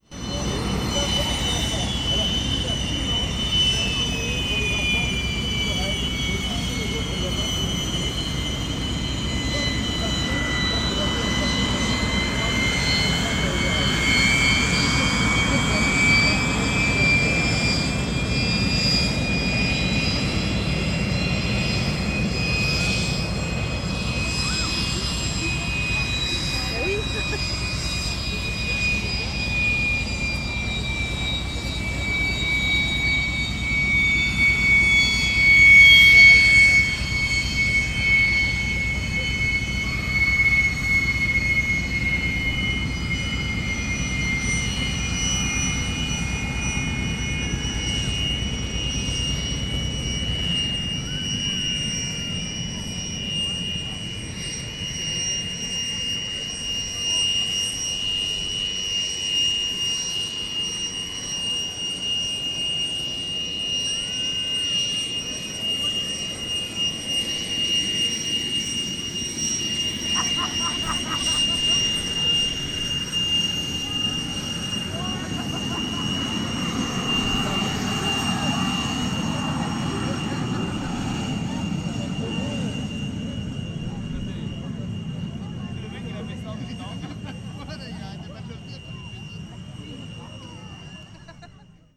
Sunday at the aviation meeting - This is the sound of two Jet planes' engines starting and passing in front of the crowd before take-off. Ambiance from the crowd and speaker can also be heard.
12 June, 16:30